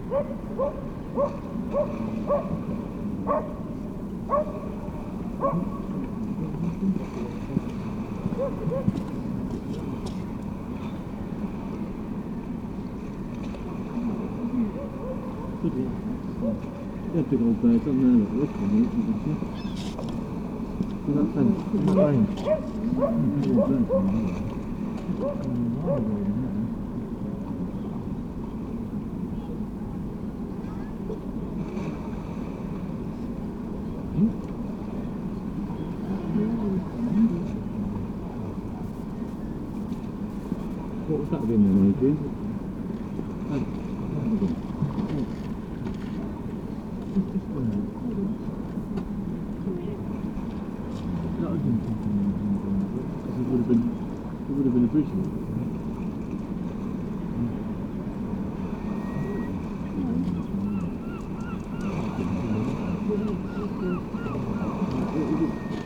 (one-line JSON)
{"title": "East Lighthouse, Battery Parade, Whitby, UK - drainage runnel ...", "date": "2019-12-28 10:25:00", "description": "drainage runnel ... small gap in brickwork to allow rainwater run off ... purple panda lavs clipped to sandwich box to LS 14 ... bird calls ... oystercatcher ... herring gull ... redshank ... turnstone ... background noise ... footfall ... voices ...", "latitude": "54.49", "longitude": "-0.61", "timezone": "Europe/London"}